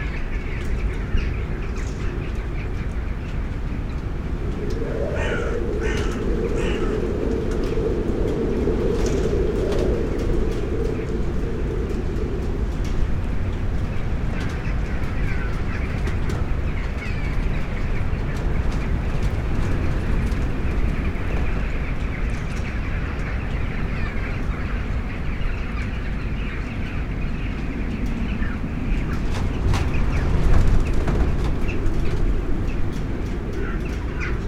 Strong wind, gusts to 70 km/h. I have found some place to hide my mics...